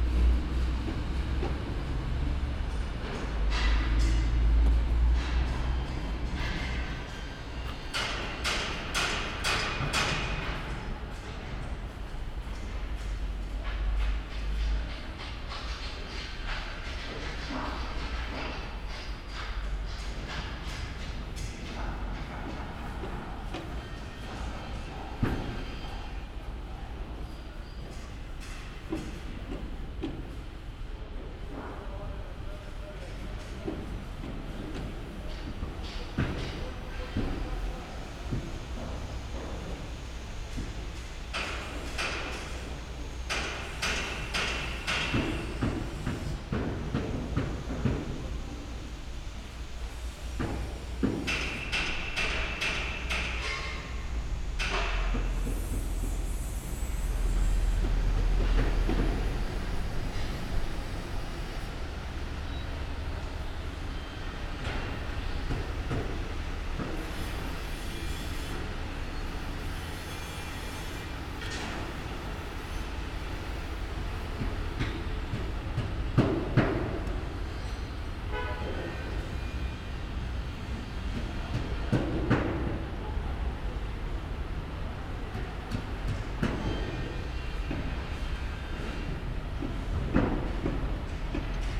The Construction Site of the new and really huge "Sparkasse" (Bank) Building is a mess of really nice Working activities
Ulm, Germany, 28 March 2014